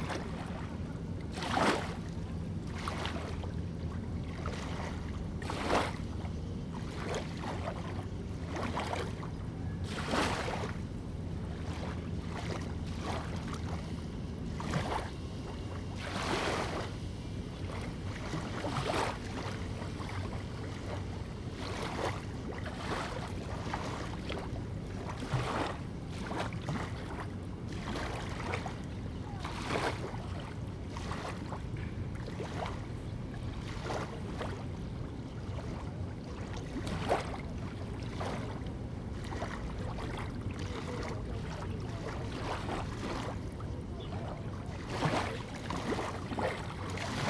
At the quayside, Tapping the pier tide
Zoom H2n MS+XY